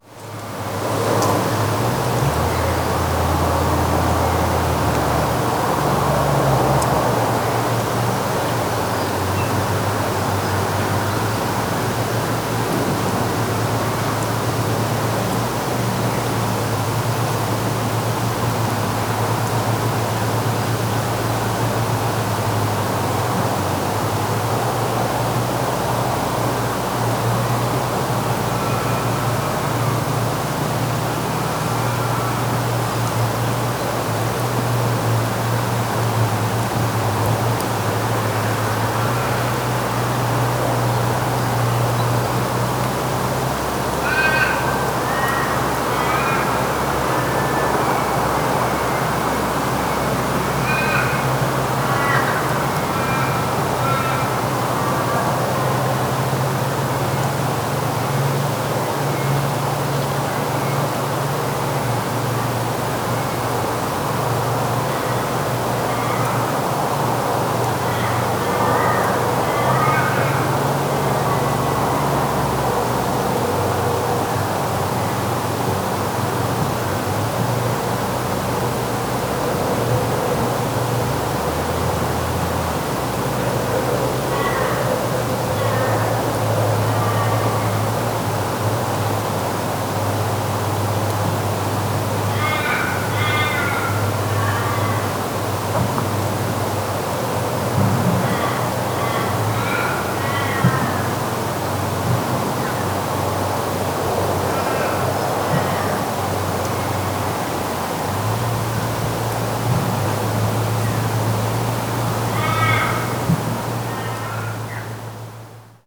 wind in leaves, birds and distant traffic from south-western highway
Argyle WA, Australia, 21 November 2009